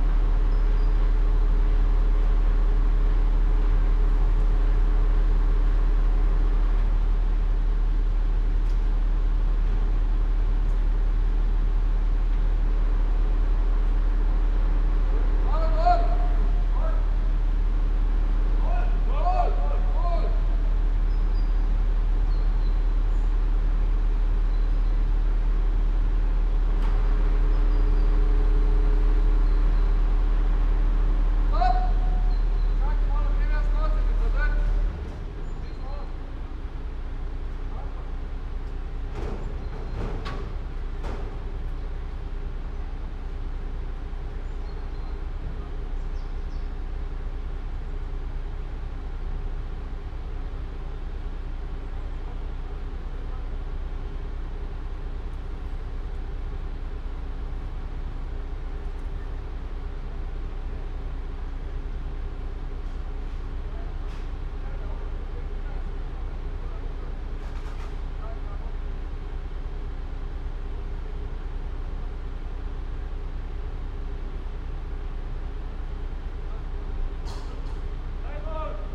from/behind window, Mladinska, Maribor, Slovenia - from/behind window
hydraulic lift and workers - coordinating the action of lifting up big glass wall